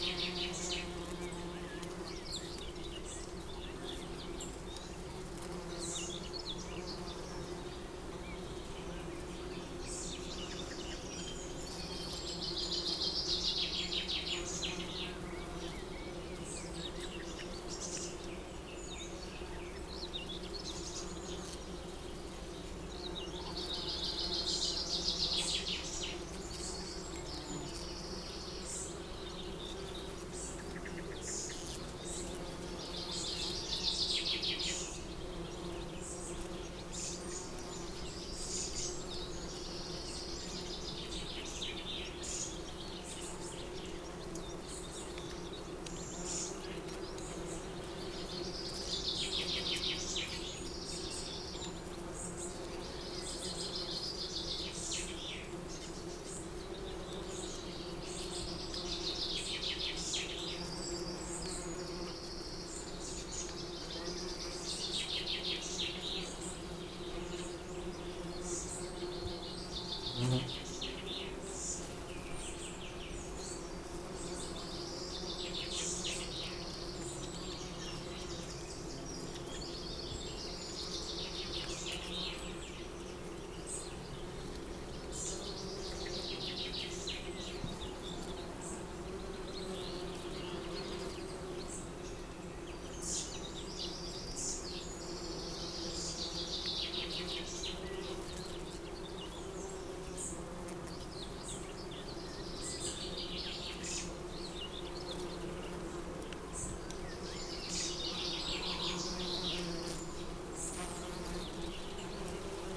Green Ln, Malton, UK - lime tree buzzing ...
Lime tree buzzing ... bees ... hoverflies ... wasps ... etc ... visiting blossom on the tree ... open lavalier mics on T bar on telescopic landing net handle ... bird song and calls from ... wren ... blackbird ... chaffinch ... whitethroat ... blue tit ... fledgling song thrush being brought food by adult birds ... particularly after 18 mins ... some background noise ...
8 July